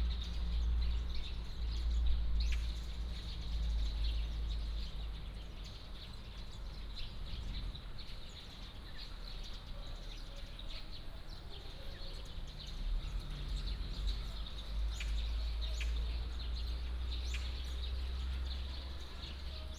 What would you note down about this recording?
in the park, Traffic sound, A variety of birds call, The snooker sound came from the room